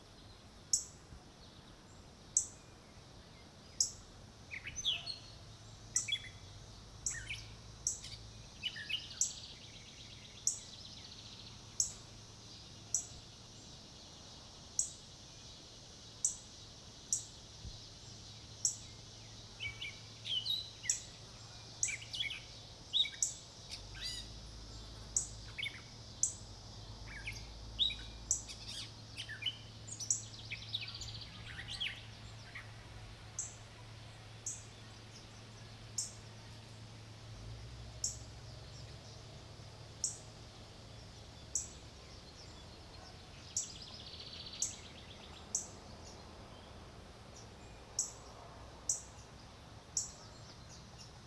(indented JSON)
{
  "title": "Disc Golf Course, Mississinewa Lake State Recreation Area, Peru, IN, USA - Birdsong at Mississinewa Lake",
  "date": "2020-07-18 20:40:00",
  "description": "Sounds heard at the disc golf course, Mississinewa Lake State Recreation Area, Peru, IN 46970, USA. Part of an Indiana Arts in the Parks Soundscape workshop sponsored by the Indiana Arts Commission and the Indiana Department of Natural Resources. #WLD 2020",
  "latitude": "40.70",
  "longitude": "-85.95",
  "altitude": "236",
  "timezone": "America/Indiana/Indianapolis"
}